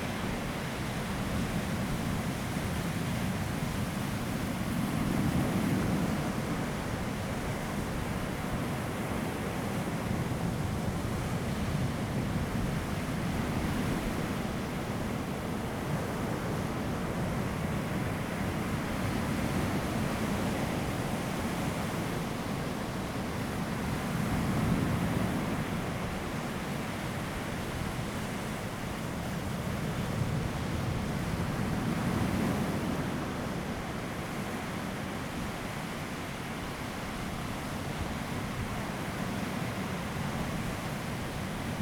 Donghe Township, Taitung County - Sound of the waves
Sound of the waves, Very hot weather
Zoom H2n MS+ XY
6 September 2014, 12:50